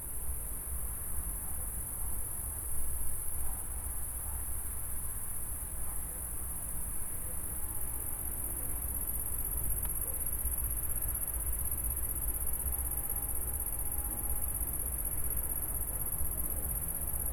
intense cricket sounds + omnipresent traffic...
21 July 2010